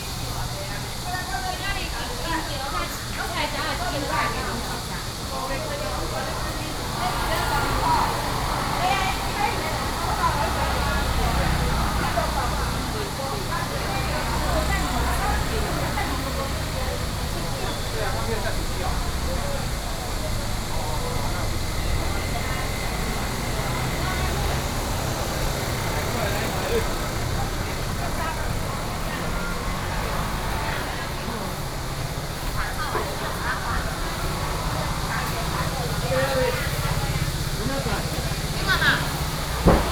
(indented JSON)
{
  "title": "Ln., Sec., Bao’an St., Shulin Dist. - Walking through the traditional market",
  "date": "2012-06-20 10:36:00",
  "description": "Cicadas called, Walking through the traditional market\nBinaural recordings\nSony PCM D50 + Soundman OKM II",
  "latitude": "24.99",
  "longitude": "121.43",
  "altitude": "20",
  "timezone": "Asia/Taipei"
}